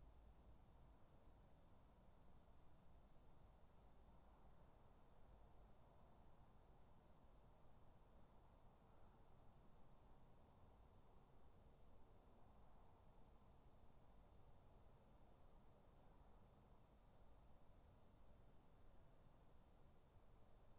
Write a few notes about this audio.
3 minute recording of my back garden recorded on a Yamaha Pocketrak